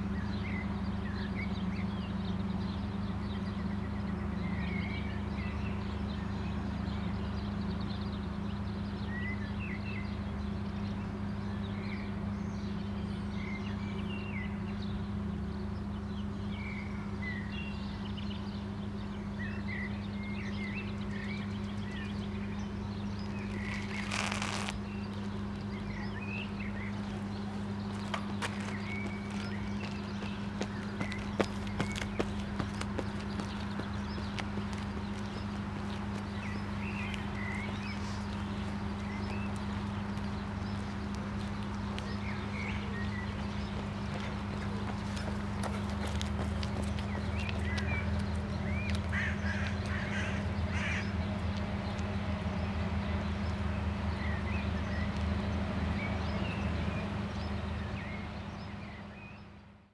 Berlin, Magnus-Hirschfeld-Ufer - idling sightseeing boat
pleasantly oscillating low frequency idle of a sightseeing boat at the other side of the river. crows crying out over the trees. runners passing by.
Berlin, Germany